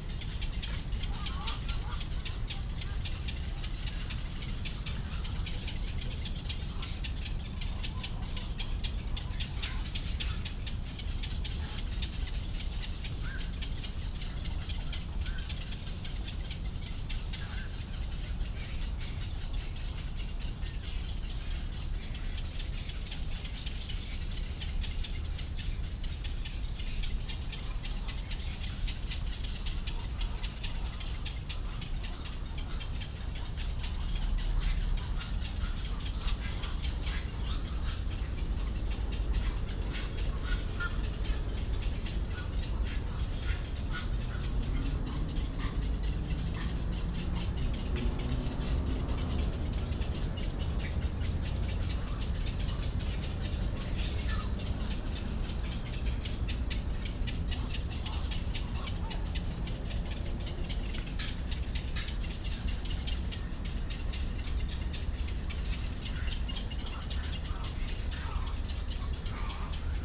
Daan Forest Park, Taipei city, Taiwan - Bird calls
Bird calls, Frogs chirping, in the park